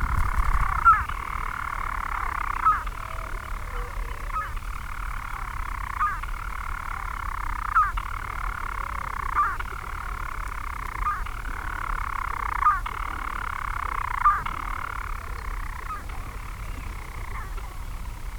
Marloes and St. Brides, UK - european storm petrel ...
Skokholm Island Bird Observatory ... storm petrel singing ..? towards the end of this clip manx shearwaters can be heard leaving their burrows heading out to sea ...
Haverfordwest, UK, 16 May 2016, 04:20